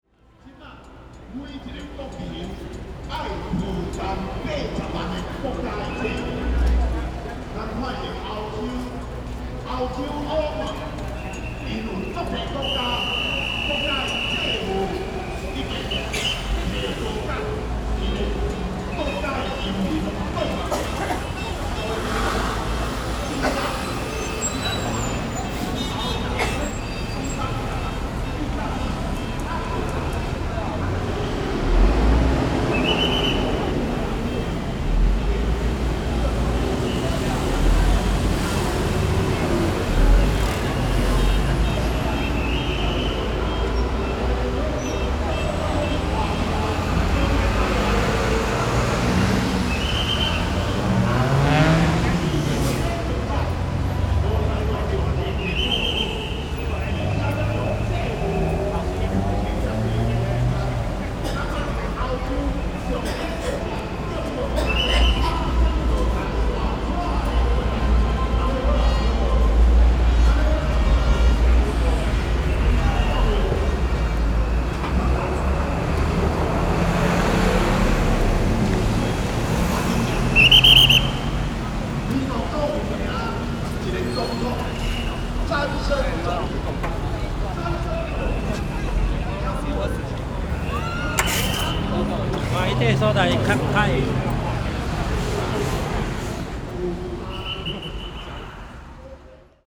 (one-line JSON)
{"title": "Taipei, Taiwan - Intersection", "date": "2012-01-08 21:07:00", "description": "Intersection, Rode NT4+Zoom H4n", "latitude": "25.04", "longitude": "121.52", "altitude": "18", "timezone": "Asia/Taipei"}